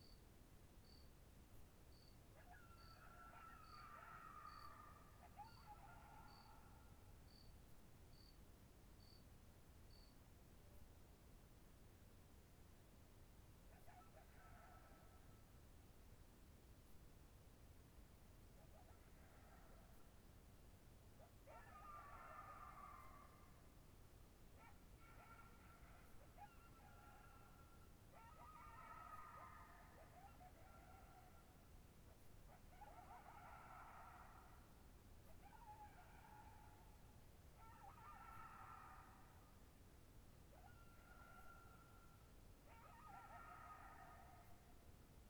SMIP RANCH, D.R.A.P., San Mateo County, CA, USA - Coyotes at Night
Coyotes at night